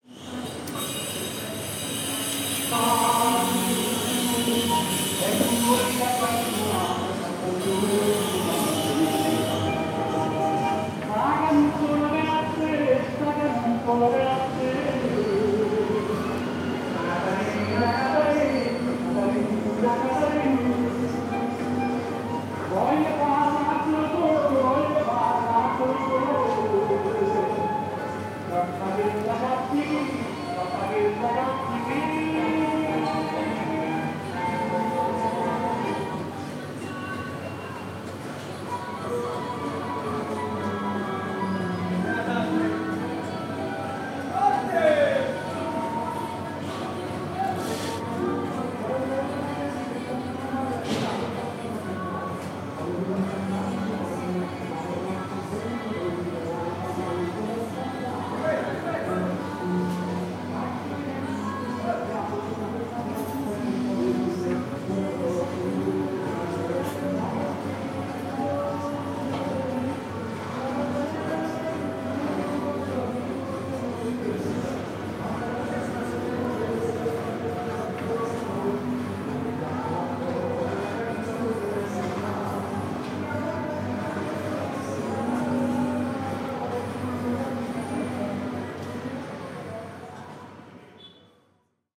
One of the noisiest cities in Europe. Some local friends listened to birds singing in their terrace for the first time. Quarantine is revealing our acoustic community.